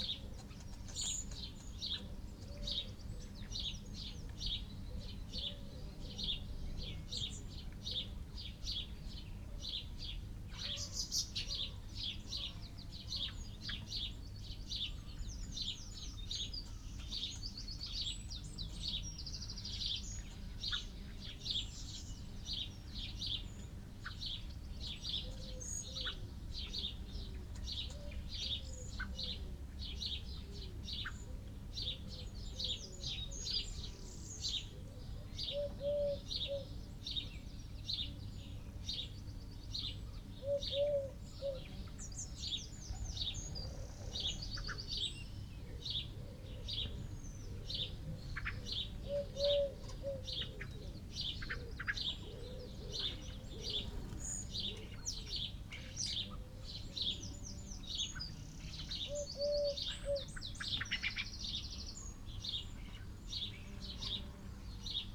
Luttons, UK - a moving away thunderstorm ...
a moving away thunderstorm ... pre-amped mics in a SASS ... bird calls ... song from ... wren ... house sparrow ... blackbird ... collared dove ... wood pigeon ... crow ... linnet ... starling ... background noise ... traffic ... a flag snapping ... ornamental lights dinging off wood work ...
Malton, UK, July 2019